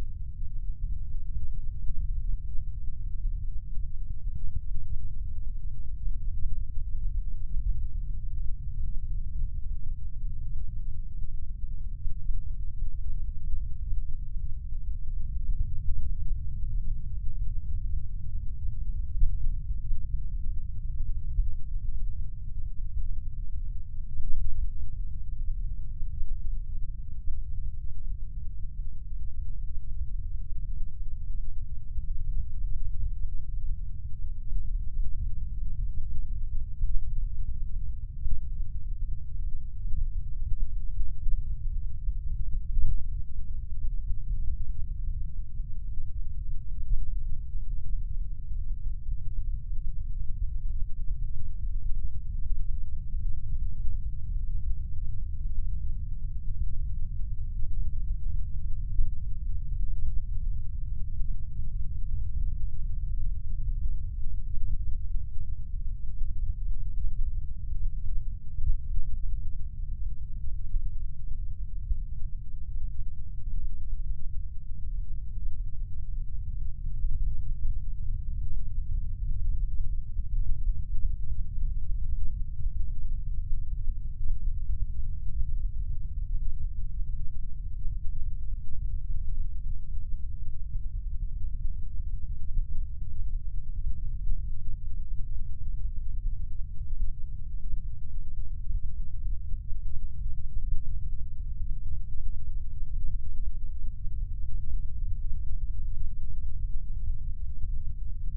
Uieum Dam after heavy rains
After several weeks of heavy rains. Uieum Dam perspectives (in order) downstream safety railing, downstream aspect, lamp post, upstream aspect, downstream aspect, upstream aspect.